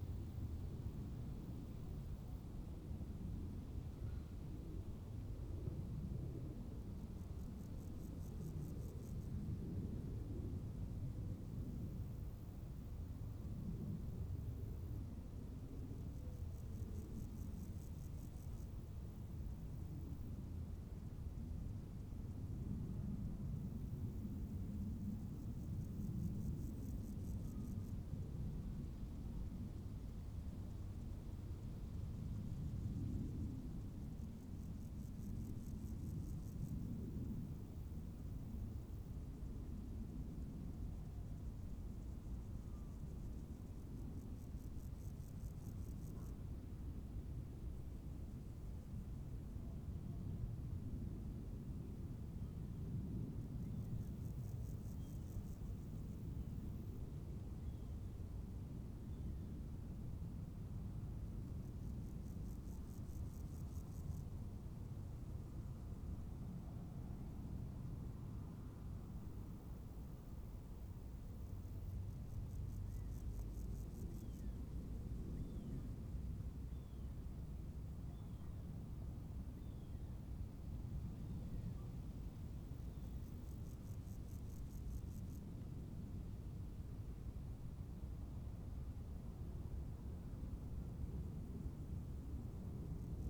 berlin, kölner damm: brachland - borderline: fallow land
crickets in the formely "cord of death" of the berlin wall
borderline: august 3, 2011
Berlin, Germany, 3 August 2011, 18:35